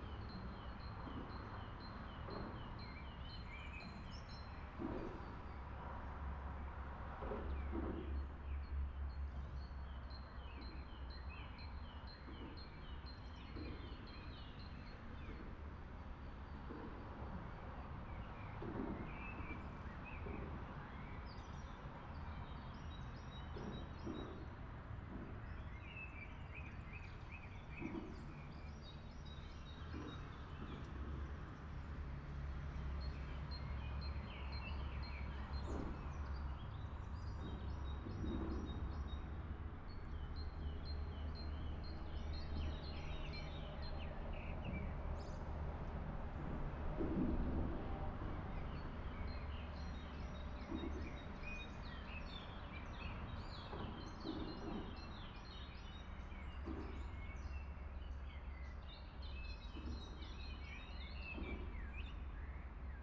Rijeka, Croatia, Hartera, Birds-Traffic - birds-traffic
DIN 90 -> AKG C414XLS -> SD USBpre2 -> Sony PCM D50